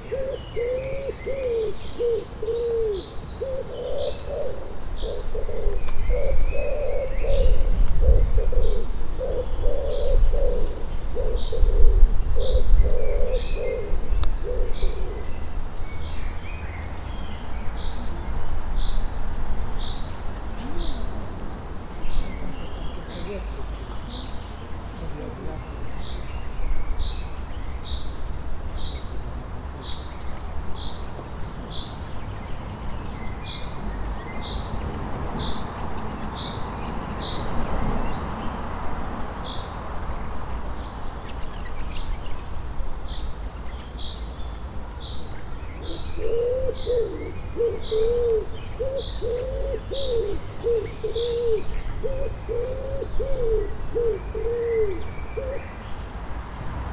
Drzymały, Gorzów Wielkopolski, Polska - Siemiradzkiego park.
Birds in Siemiradzkiego park. The place where recording has been captured used to be the pond with the small waterfall before the second war, now it's a dry part of the park.
23 April 2020, ~15:00